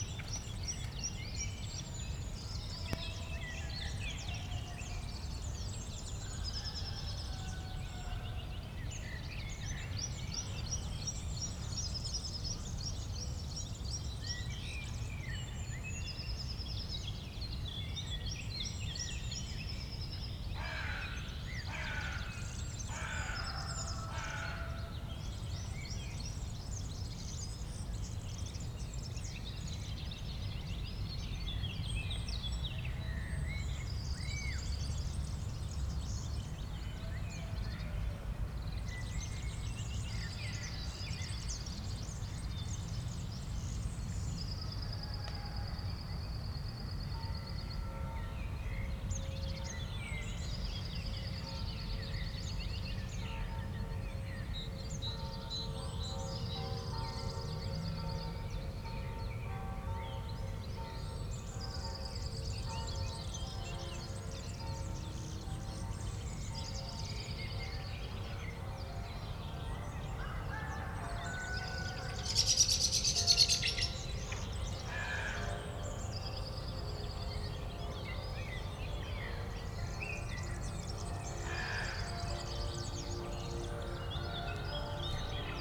Berlin, Friedhof Lilienthalstr. - Easter morning cemetery ambience
Easter morning cemetery atmosphere on Friedhof Lilienthalstr., Berlin. Crows, ravens, tits and finches and a lot of other birds, church bells, people, dogs, aircraft and some strange clicks and pops, probably because the microphones are just lying around with not much care taken, moved by a gentle wind
(Tascma DR-100 MKIII, Primo EM272)
Deutschland, 18 April 2022, 09:45